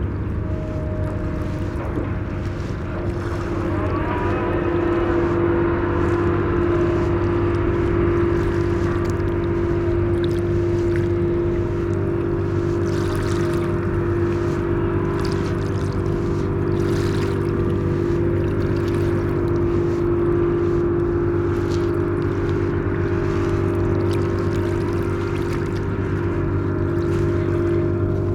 kill van kull staten island
dredging boats, planes, waves, distant birds